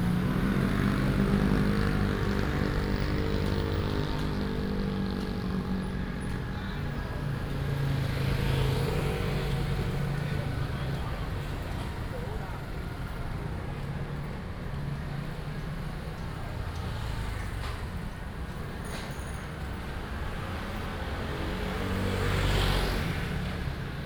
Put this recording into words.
Traditional temple festivals, Firecrackers